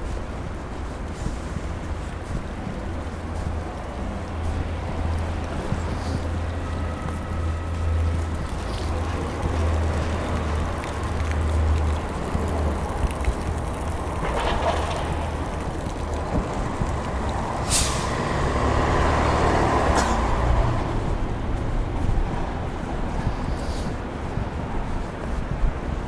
Its a warm afternoon, I get out of the bus and start walking. its crowded and traffic on the street...
many buses returning from schools with children... and a bit annoying light wind.
recorded: thursday, 22/10/2009 at 5:45 p.m